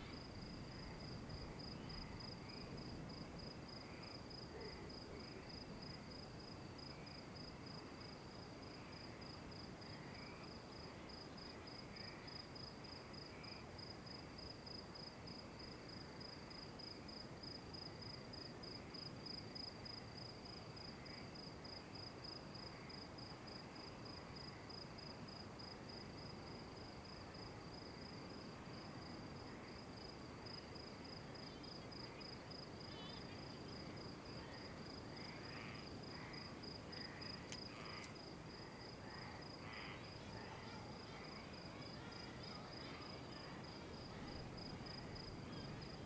Los Silos, Santa Cruz de Tenerife, España - MIDNIGHT IN TIERRA DEL TRIGO
Opening the WLD2014 in a wonderful place Tierra del Trigo, north of the island of Tenerife, In the pines and in the village.